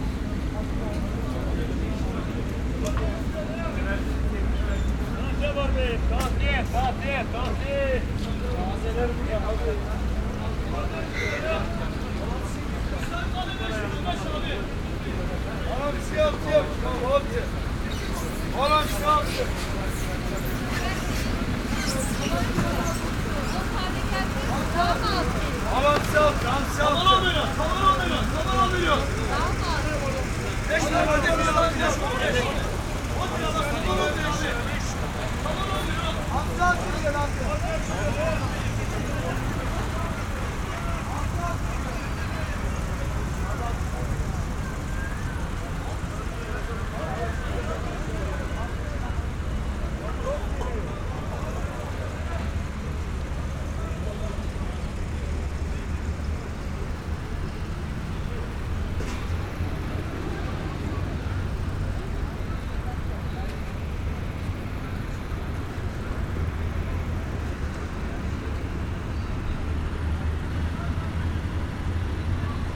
fish market near Galata bridge, Istanbul
walking through the small fish market near the Galata bridge
February 2010